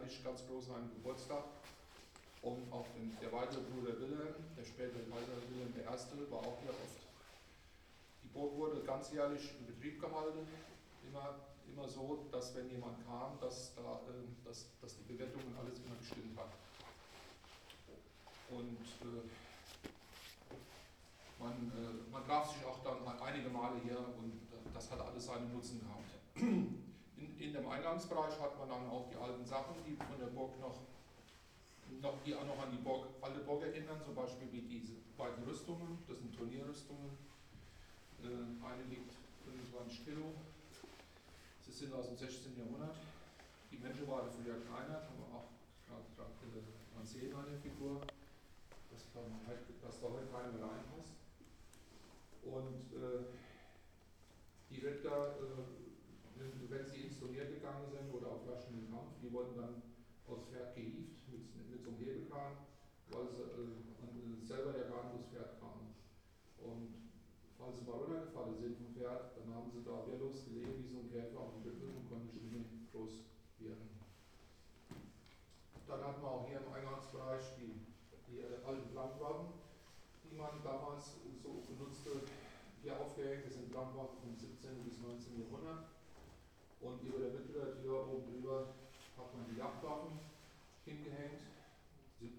niederheimbach: burg sooneck - sooneck castle tour 2
guided tour through sooneck castle (2), entrance hall & different rooms, guide continues the tour, visitors follow him with overshoes
the city, the country & me: october 17, 2010